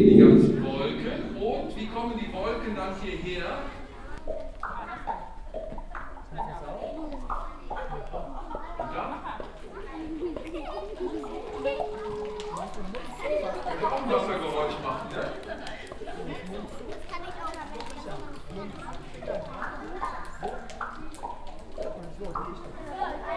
Düsseldorf, Tonhalle, sound performance for kids
Recording of a performance for kids at the entrance hall of the concert venue Tonhalle - you are listening to a demonstration of the travel of water in cutted excerpts
soundmap d - social ambiences and topographic field recordings